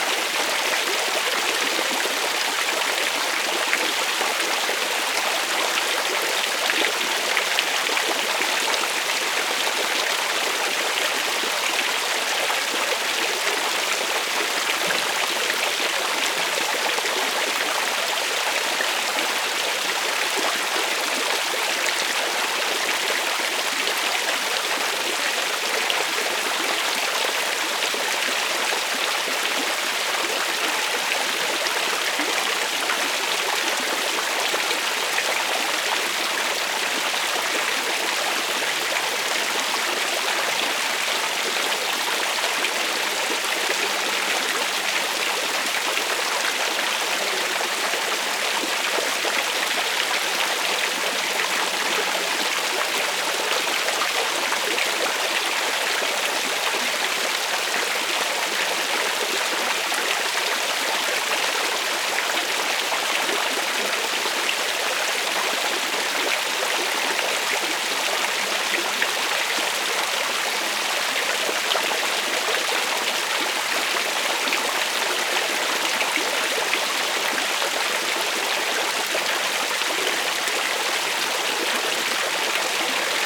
Ulm, Germany - Fountain near Ulmer Münster
one of the many fountains around the Münster
4 July 2009, ~14:00